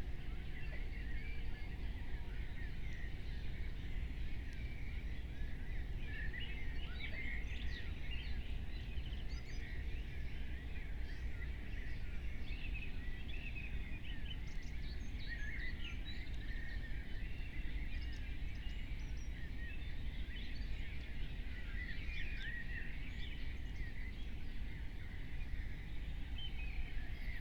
04:00 Berlin, Buch, Mittelbruch / Torfstich 1 - pond, wetland ambience
early morning ambience, a creature is investigating the hidden microphones again.